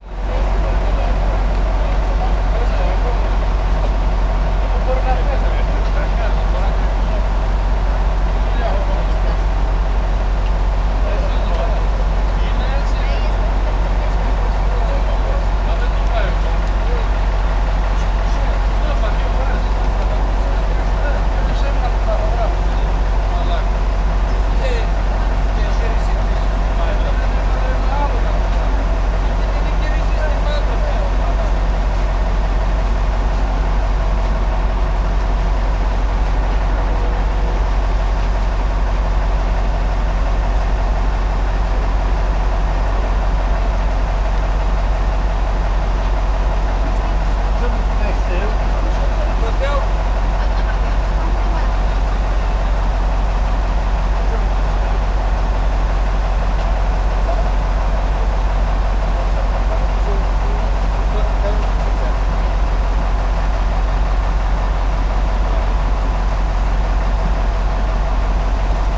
Heraklion Airport, Heraklion, Crete, parking lot for buss - lows of a bus
vacationer getting on coach buses. asking the drivers to which hotels they are going. people walking in front of the microphones, gating the high frequencies. interesting, human high pass filter. full low end coming from the bus engine